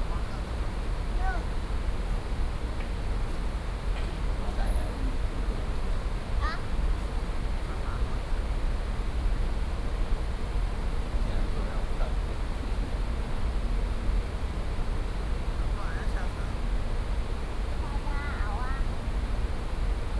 Taipei Main Station, Taiwan - Railway platforms
Railway platforms, Train traveling through, Sony PCM D50 + Soundman OKM II